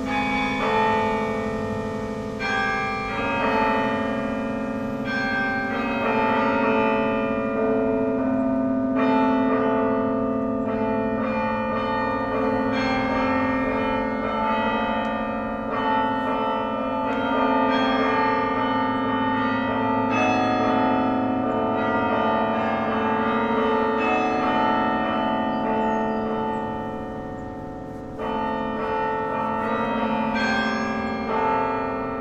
Carillon in Berlin, near Tiergarten. One of the biggest of its kind in the world. And quite impressive when repertoire like this is being played.
(zvirecihudba.cz)
Berlin, Germany, 31 August 2013